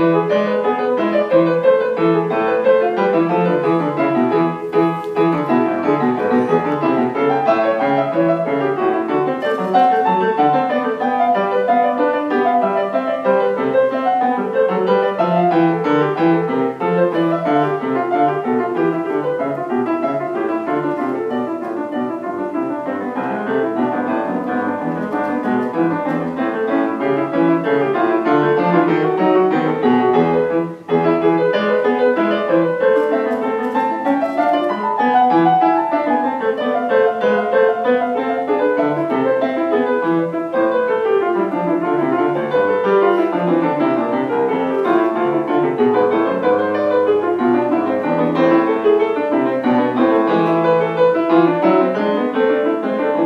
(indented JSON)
{
  "title": "Monserrat, Valencia, España - Unió Musical",
  "date": "2015-07-28 11:20:00",
  "description": "Interpretación de una pieza de piano.",
  "latitude": "39.36",
  "longitude": "-0.60",
  "altitude": "165",
  "timezone": "Europe/Madrid"
}